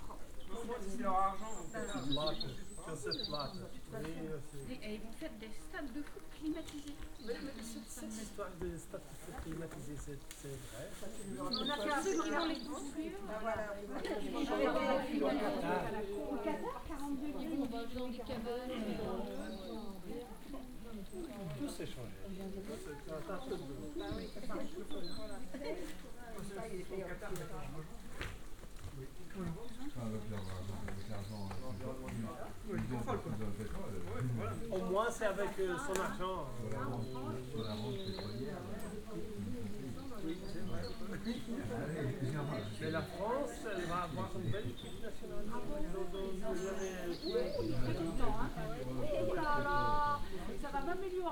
trail leading east from Porto da Cruz - the French
(binaural) a group of French hikers taking a break on the trail